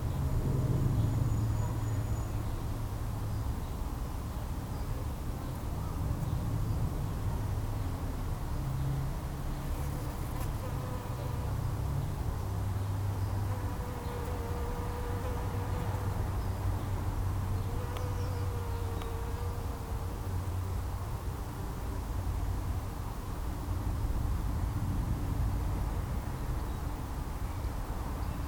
A Japanese Quince bush full of bees - bees in the bush
One of the first days of spring, and the garden felt beautifully alive. It was a Sunday, and we were returning home from a wonderfully romantic Wedding. The sunny street was its usual quiet Sunday self, with the murmur of traffic from the nearby main road drifting over and mixing with the chatter of the birds in the trees in the gardens. As we unpacked the car, I noticed that many small bees were busily working at the pink flowers in a nearby quince bush. I remembered this is an annual sound for us, and I popped my little recorder down inside the foliage to capture the sound of this labour. You can hear along with their buzzing, the light hand of the wind rifling through the stiff branches, and the aeroplanes that constantly pass over this area, giving the days in our street their distinctive sense of time. There is also a bird perching in the winter flowering cherry blossom tree, whose song delights for the last portion of the recording.
Reading, UK, 13 April 2014